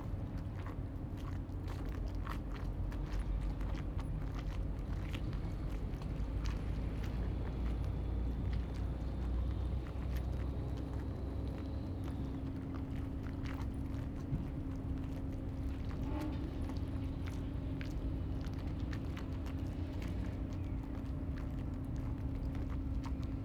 龍門漁港, Huxi Township - In the dock
In the dock, Tide
Zoom H2n MS +XY
21 October 2014, ~10:00